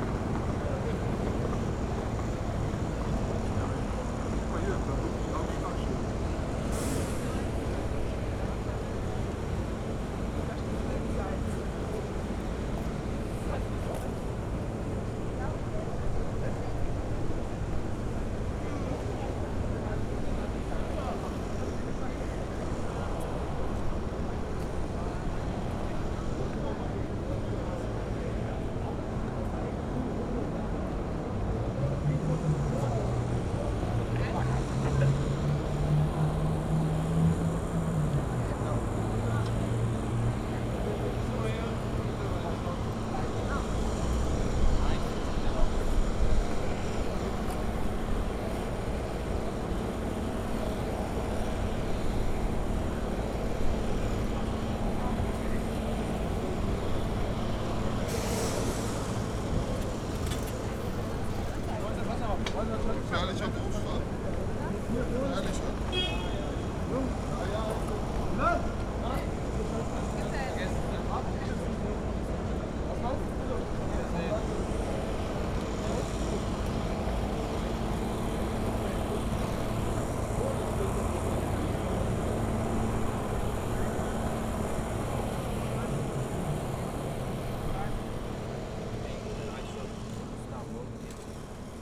berlin: hermannplatz - the city, the country & me: 1st may riot soundwalk
soundwalk around hermannplatz, police cars, vans, trucks and water guns waiting on the revolution
the city, the country & me: may 1, 2011
Berlin, Germany, 1 May 2011, 20:13